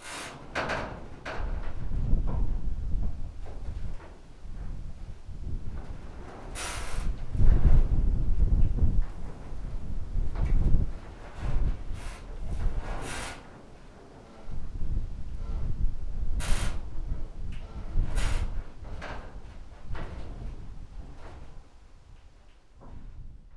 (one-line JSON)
{"title": "Sasino, along Chelst stream - containers", "date": "2015-08-17 12:35:00", "description": "an abandoned, wrecked cargo container standing on the field. bent pieces of its body groaning in the wind. the whole structure overloaded by the gusts of wind tightens and weeps.", "latitude": "54.78", "longitude": "17.74", "altitude": "2", "timezone": "Europe/Warsaw"}